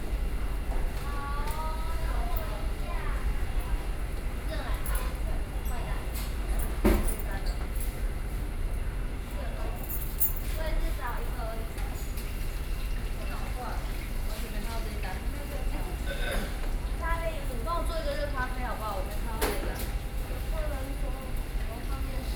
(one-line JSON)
{"title": "Běixīn Rd, Xindian District - McDonald's meal counter", "date": "2012-11-07 09:06:00", "latitude": "24.97", "longitude": "121.54", "altitude": "27", "timezone": "Asia/Taipei"}